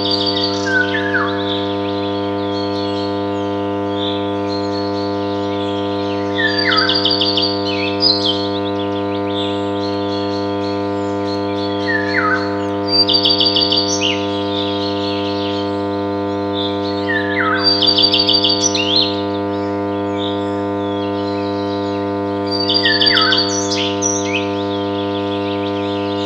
Wyspa Sobieszewska, Gdańsk, Poland - Las transformator
Las transformator rec. Rafał Kołacki